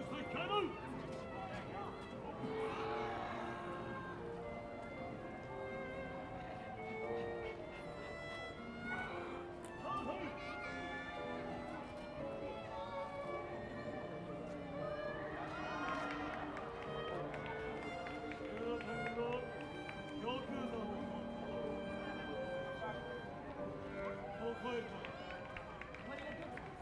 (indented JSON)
{
  "title": "Inokashira, Mitaka-shi, Tōkyō-to, Japonia - Park Performers",
  "date": "2015-01-24 15:30:00",
  "description": "Recorded during a saturday afternoon. Kichijouji and Inokashira Koen are very popular places among street performers and artists all around Tokyo. Here you can hear the mixture of several performances going on at once. Recorded with Zoom H2N.",
  "latitude": "35.70",
  "longitude": "139.58",
  "altitude": "53",
  "timezone": "Asia/Tokyo"
}